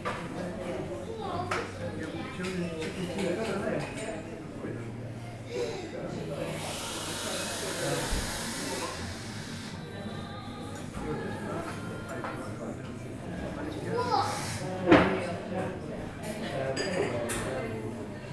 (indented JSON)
{
  "title": "The View Bar & Cafe, Den Cres, Teignmouth, UK - The View Bar & Cafe, Teignmouth.",
  "date": "2017-09-08 12:12:00",
  "description": "The View is a relatively new building on Teignmouth sea front. The accousics are quite soft as there are sofas and easy chairs in the cafe. Recorded on a Zoom H5.",
  "latitude": "50.55",
  "longitude": "-3.49",
  "altitude": "4",
  "timezone": "Europe/London"
}